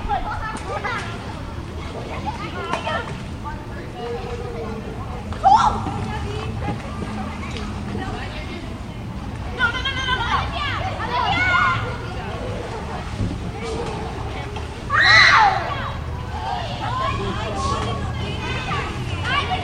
Montreal: Westmount municipal pool - Westmount municipal pool
equipment used: Marantz
Kids playing at Westmound municipal pool
QC, Canada, 27 June 2009